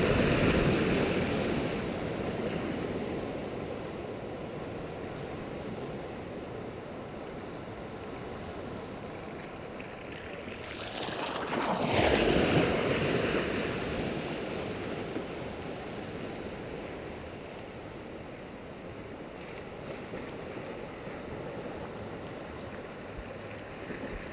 Ars-en-Ré, France - Waves wheeling
On a pier, it's a strong high tide. Big waves are rolling and wheeling along the jetty.
20 May 2018, 21:00